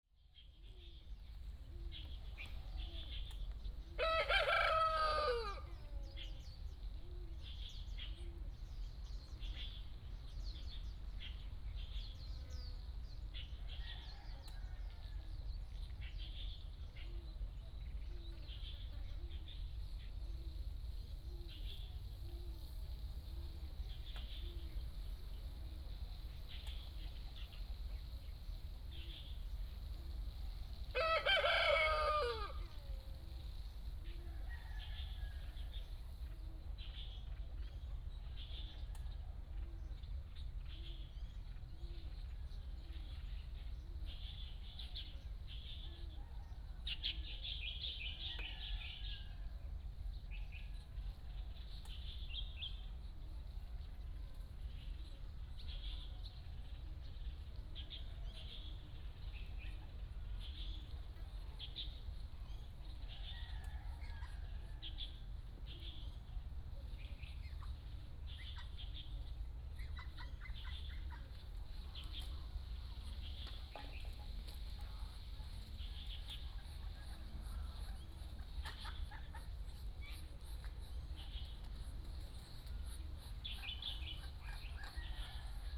天福村, Hsiao Liouciou Island - Small village
Small village, Birds singing, Ducks and geese, Chicken sounds
Pingtung County, Taiwan, November 2014